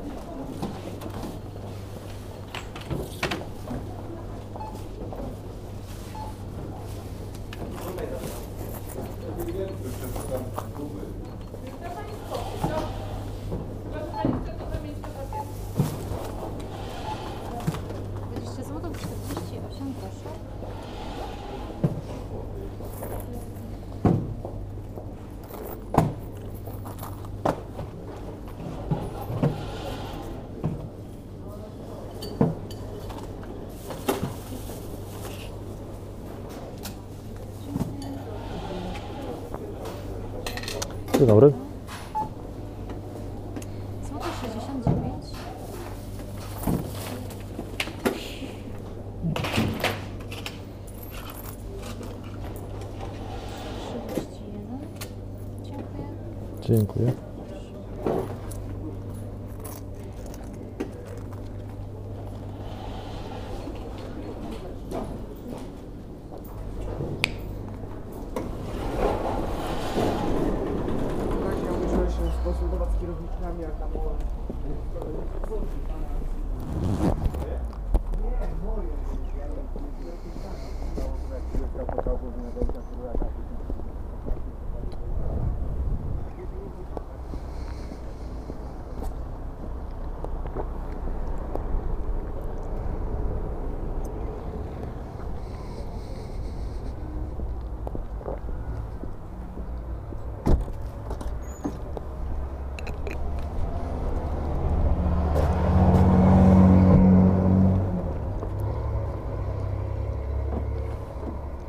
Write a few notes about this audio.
Doing shopping at housing estate supermarket.